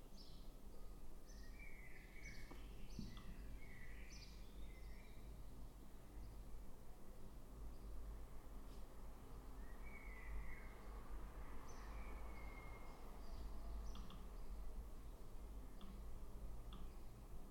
open window and activities in the kitchen. a day before taking train with antoine (his voice is also in this recording) and others to istanbul. in the mood of preparing...
2 x dpa 6060 mics
Deutschland, 28 June 2022, ~18:00